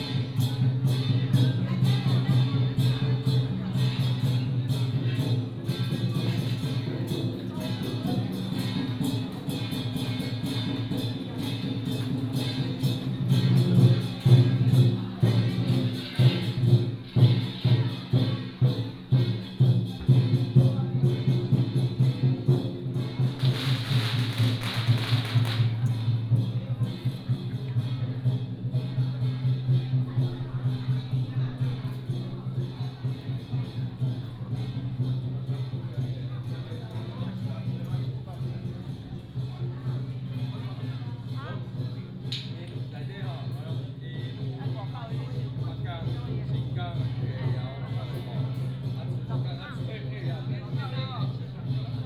Lugang Mazu Temple, 鹿港鎮 - Walking in the temple
Walking in the temple, Pilgrimage group, firecracker
Lukang Township, Changhua County, Taiwan, 15 February 2017